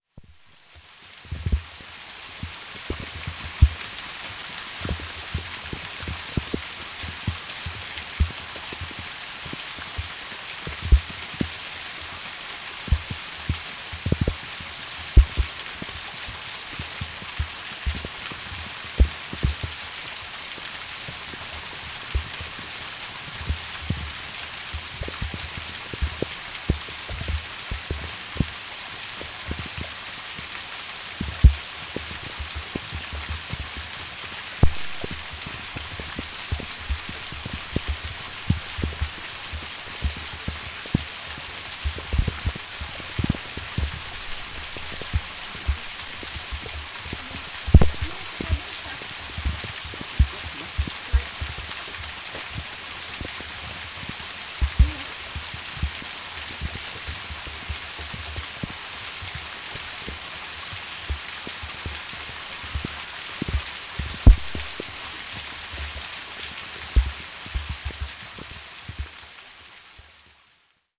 Parc Saint Nicolas, Angers, France - (589 ORTF) Waterfall
Recording of a waterfall. From some perspective, this could be perceived as a "broken recording", but I guess this is exactly the situation, where unwanted elements sound quite interesting and fit pretty well. The recorder was placed too close to the waterfall, thus big droplets from splashes were hitting its boxing.
Recorded with Sony PCM D100